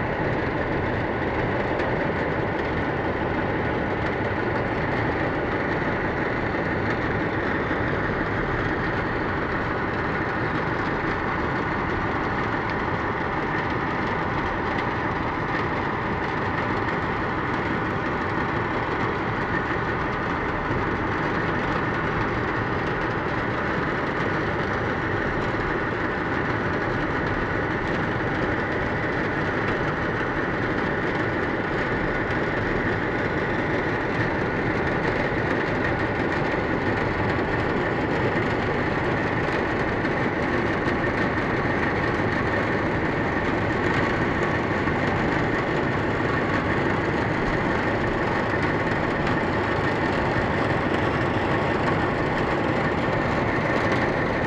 Tilos Island, Greece - Tilos Diagoras
When the large ferry boat that links the small island of Tilos to Piraeus arrives in port, the smaller yachts and fishing boats have to leave their berths while it unloads cars and passengers. this recording captures this process. small boats start engines and leave around 3', Diagoras arrives around 12' and leaves around 23'30". Aquarian audio hydrophone / Tascam DR40